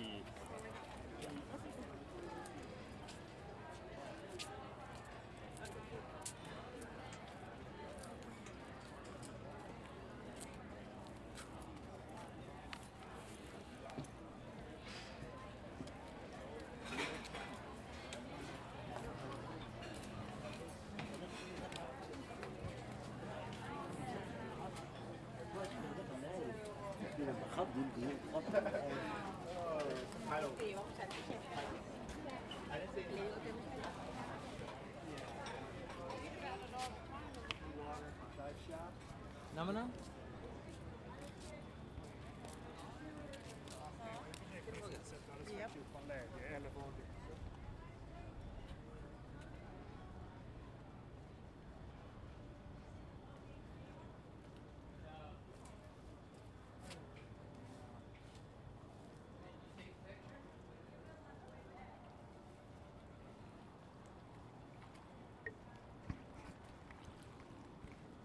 Harbor Way, Santa Barbara, CA, USA - Sounds of Santa Barbara Harbor
The sound was recorded as I was walking by the restaurants and pedestrian in Santa Barbara Harbor. It includes the sound of fire truck or an ambulance car from far away, clicking sound of utensils in the restaurants, occasional talks from people inside and outside the restaurants in different languages like English, Spanish, Chinese and other. (Boris)